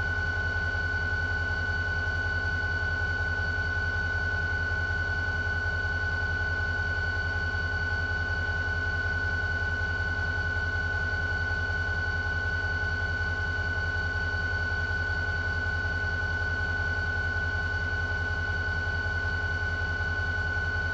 Rijeka, Locomotive, waiting and noiseing
10m from 100tons locomotive
Primorsko-Goranska županija, Hrvatska